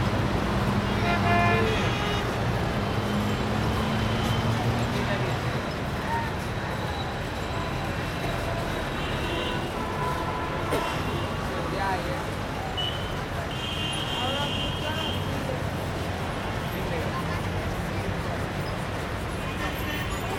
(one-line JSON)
{"title": "New Howrah Bridge Approach Rd, Gulmohar Railway Quarters, Mali Panchghara, Howrah, West Bengal, Inde - Howra Bridge - Ambiance", "date": "2003-02-24 15:00:00", "description": "Howrah Bridge\nAmbiance sur le pont", "latitude": "22.59", "longitude": "88.35", "timezone": "Asia/Kolkata"}